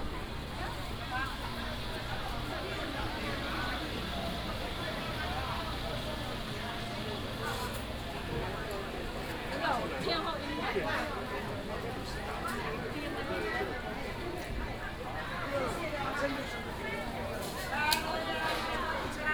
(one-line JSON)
{"title": "Zhongshan Rd., Toufen City - vendors peddling", "date": "2017-08-30 10:24:00", "description": "vendors peddling, Traditional Markets area, traffic sound, Binaural recordings, Sony PCM D100+ Soundman OKM II", "latitude": "24.68", "longitude": "120.91", "altitude": "24", "timezone": "Asia/Taipei"}